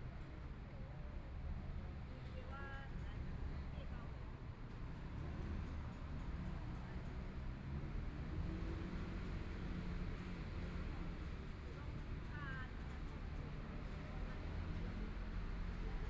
ZhongYuan Park, Taipei City - Elderly chatting
Afternoon sitting in the park, Traffic Sound, Sunny weather, Community-based park, Elderly chatting
Binaural recordings, Please turn up the volume a little
Zoom H4n+ Soundman OKM II
17 February 2014, 16:06